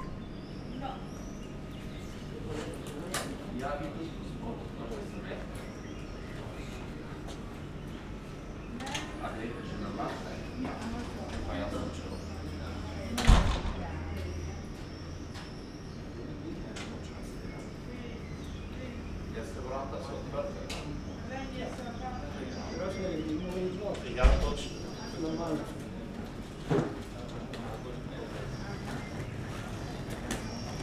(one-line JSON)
{"title": "Spielfeld, Strass, Steiermark - station, waiting for departure", "date": "2012-06-03 14:45:00", "description": "quiet country side station on a sunday afternoon. everybody is waiting for the train to Graz.\n(SD702 AT BP4025)", "latitude": "46.71", "longitude": "15.63", "altitude": "260", "timezone": "Europe/Vienna"}